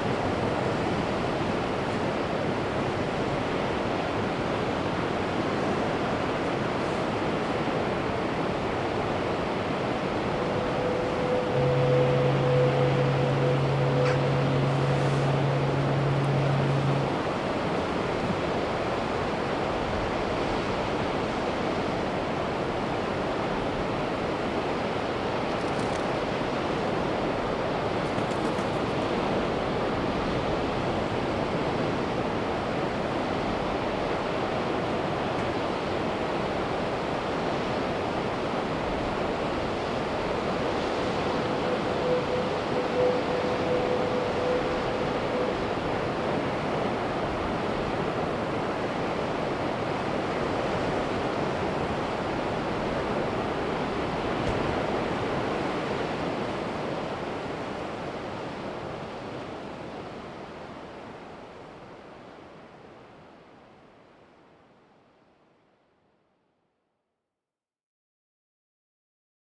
Lizard Point, UK
Southern Most point in England in a fog bank. Ship to shore acoustic signals